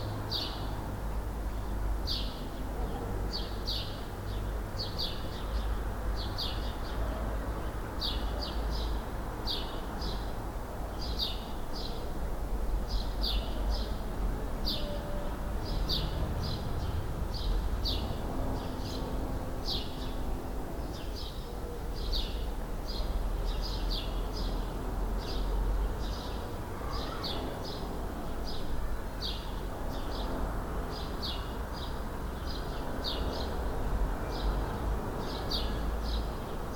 {"title": "Ein Tag an meinem Fenster - 2020-03-30", "date": "2020-03-30 18:07:00", "latitude": "48.61", "longitude": "9.84", "altitude": "467", "timezone": "Europe/Berlin"}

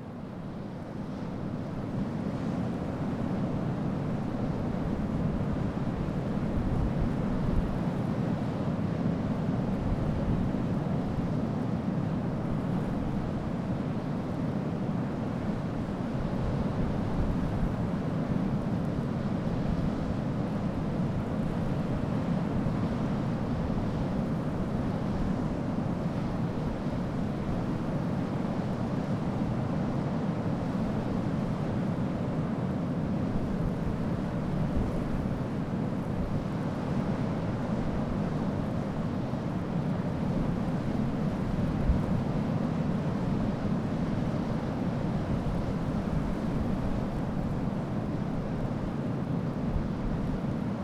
{"title": "Latvia, Ventspils, sea and wind in the dunes - Latvia, Ventspils, seanand wind in the dunes", "date": "2011-08-10 18:15:00", "description": "last recording in Ventspils, cause ten minutes later my recorder was flooded by the seas wave", "latitude": "57.38", "longitude": "21.52", "altitude": "2", "timezone": "Europe/Vilnius"}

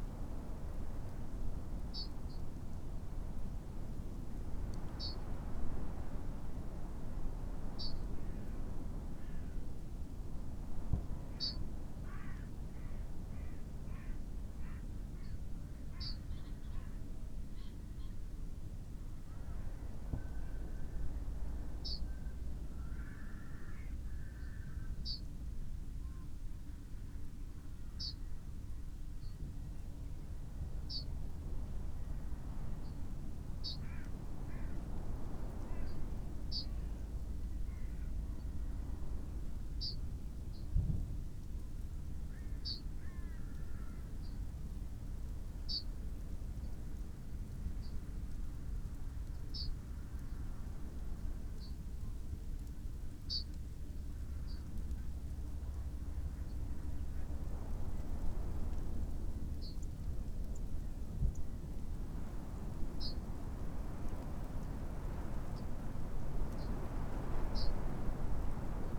{"title": "Green Ln, Malton, UK - under a hedge ... wind ... snow showers ...", "date": "2021-04-11 08:08:00", "description": "under a hedge ... wind ... snow showers ... xlr SASS to Zoom H5 ... bird calls ... crow ... yellowhammer ... skylark ... pheasant ... buzzard ... taken from unattended extended unedited recording ...", "latitude": "54.12", "longitude": "-0.56", "altitude": "89", "timezone": "Europe/London"}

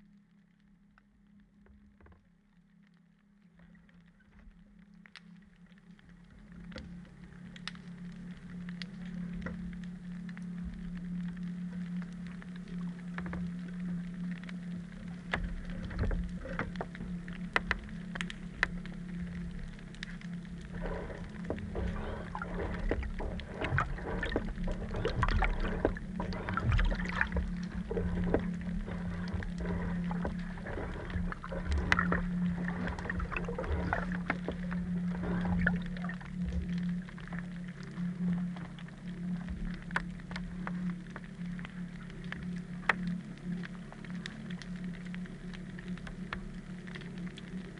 {"title": "Kildonan Bay, Isle of Eigg - Pistol Shrimp, Outboard Motor & Flushing Toilet", "date": "2019-07-03 01:17:00", "description": "Recorded with an Aquarian Audio H2a hydrophone and a Sound Devices MixPre-3", "latitude": "56.88", "longitude": "-6.12", "timezone": "Europe/London"}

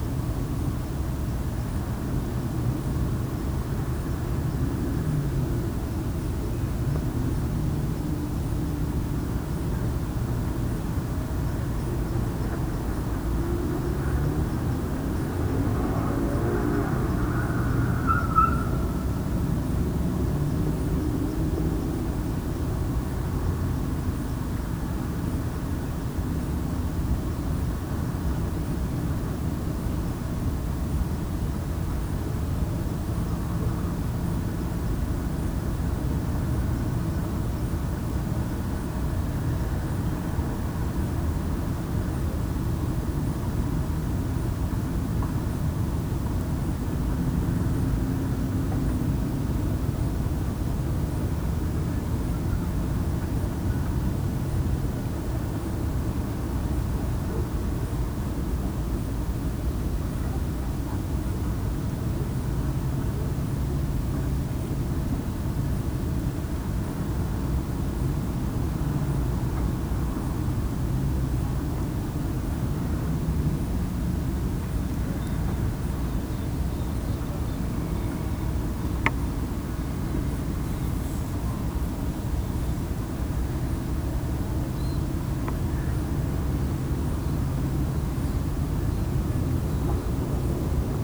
{"title": "Urumau, Lyttelton, Aotearoa - On the Urumau Reserve track above Lyttelton", "date": "2015-06-21 12:54:00", "description": "A quiet June afternoon rest stop on the walking track on Urumau reserve. The sounds of the port town rise up, dogs barking, a whooping child, a screech of a tyre.", "latitude": "-43.60", "longitude": "172.73", "altitude": "221", "timezone": "Pacific/Auckland"}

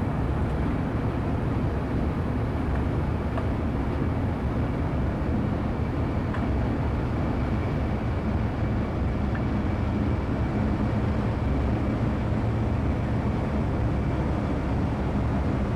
{"title": "berlin, plänterwald: spree - the city, the country & me: spree river bank", "date": "2014-01-26 16:32:00", "description": "workers attach towboat to coal barges, cracking ice of the frozen spree river, promenaders\nthe city, the country & me: january 26, 2014", "latitude": "52.48", "longitude": "13.50", "timezone": "Europe/Berlin"}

